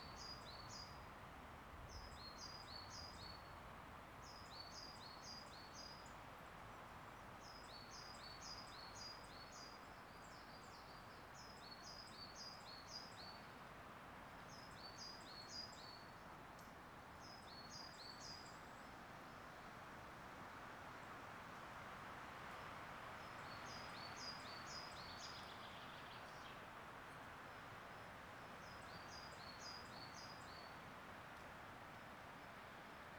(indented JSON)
{
  "title": "Unnamed Road, Morpeth, UK - Harwood Forest birdsong",
  "date": "2020-03-21 12:40:00",
  "description": "Bird song in ride of Harwood Forest in Northumberland recorded on a Tascam DR-05",
  "latitude": "55.21",
  "longitude": "-2.03",
  "altitude": "267",
  "timezone": "Europe/London"
}